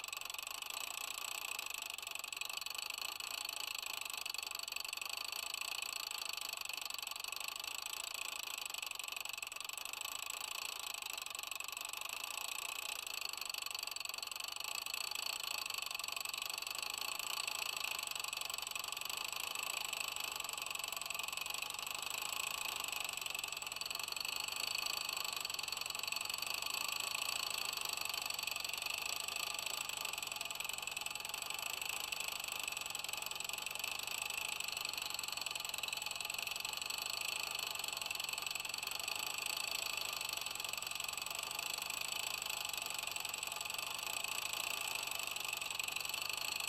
cologne, eupenerstr, cellar, eggclocks
inside my former studio - recording of 2 egg clocks
soundmap nrw: social ambiences/ listen to the people in & outdoor topographic field recordings
Cologne, Germany